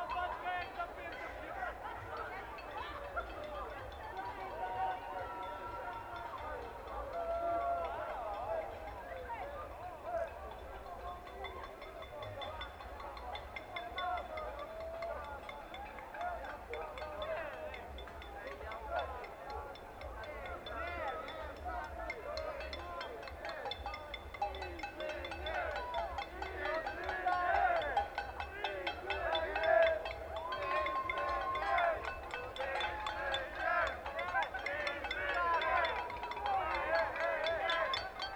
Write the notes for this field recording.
University campus. Local students tradition is that freshly graduated engineer or master of science must be thrown into the Kortowskie lake by his collegues. Also in winter...